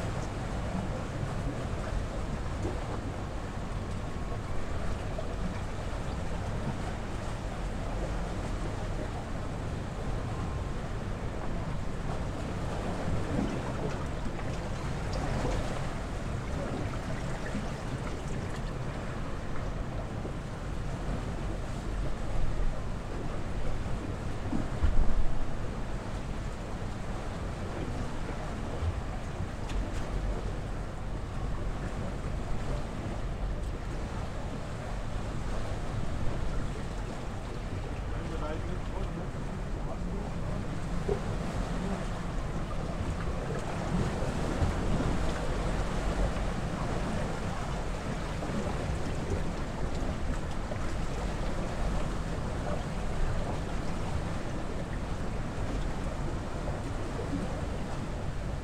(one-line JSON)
{"title": "Chania, Crete, at the lighthouse", "date": "2019-05-06 10:50:00", "description": "on the stones at the lighthouse", "latitude": "35.52", "longitude": "24.02", "altitude": "2", "timezone": "Europe/Athens"}